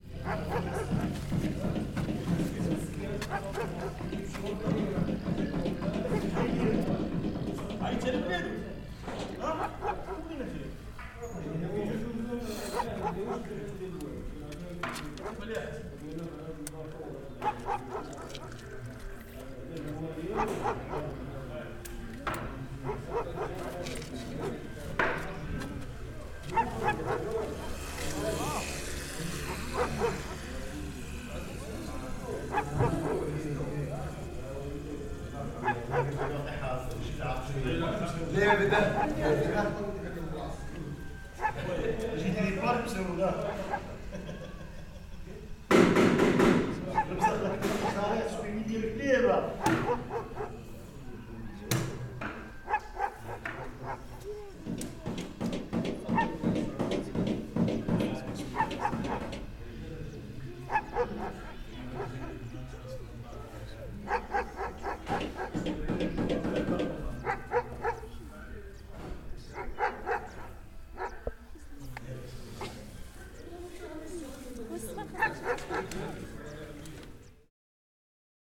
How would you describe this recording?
Des hommes discutent dans un café et des ouvriers travaillent le métal avec un marteau dans un atelier de ferronnerie. Son enregistré par Chahine et Loubna.